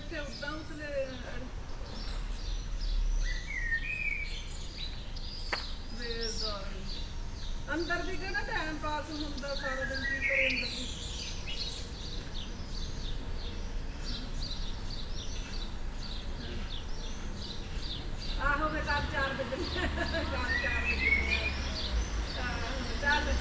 Via Leone Amici, Serra De Conti AN, Italia - vicolo cieco con gatto
Scendendo le scale di un vicolo cieco, passando sotto un porticato semi-chiuso che finisce in una piccola piazza dove ad aspettare c'è un gatto.
(Binaural: Dpa4060 into Shure FP24 into Sony PCM-D100)